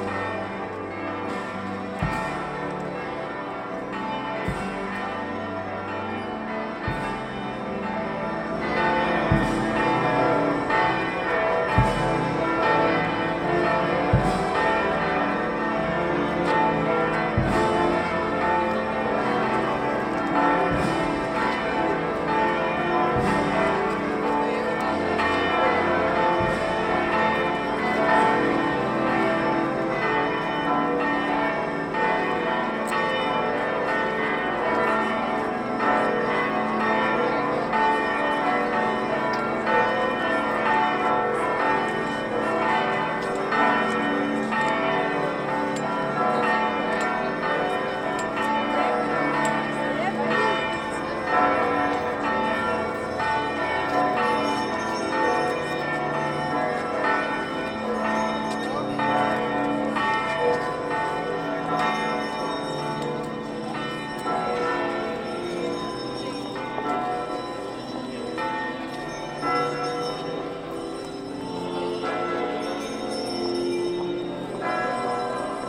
Easter Procession at Cathedral Sq, Vilnius, bells, orchestra, crowd talks
easter, procession, church bells, capital, liturgy, priest, orchestra, crowd, Vilnius